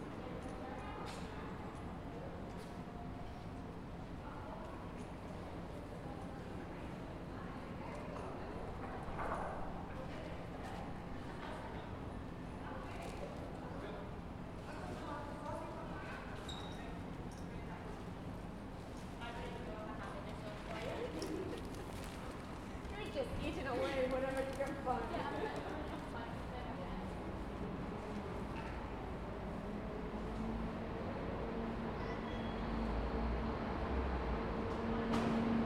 Ambient soundscape from underneath the 'Hielanman's Umbrella' on Monday 2nd May 2021 including traffic noise, footsteps/other sounds from pedestrians, and transport oriented public address notices from Glasgow Central train station. Recorded in stereo using a Tascam DR-40x.